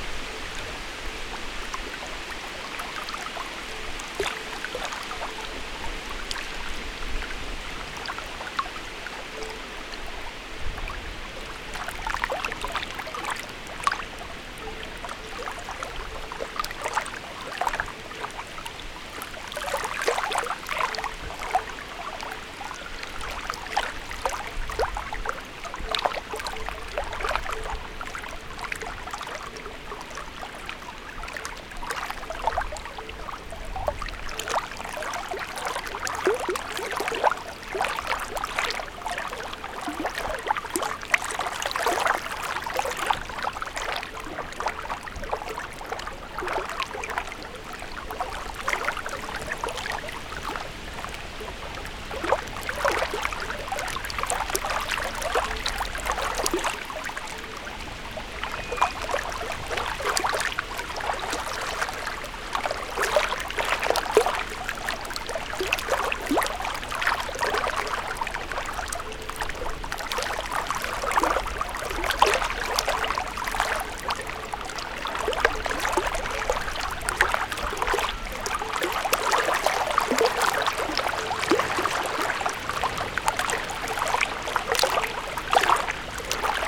Barragem, Miranda do Douro, Portugal - Barragem em Miranda do Douro
Barragem de Miranda do Douro. Mapa Sonoro do rio Douro. Miranda do Douro, Power Plant. Douro River Sound Map
16 May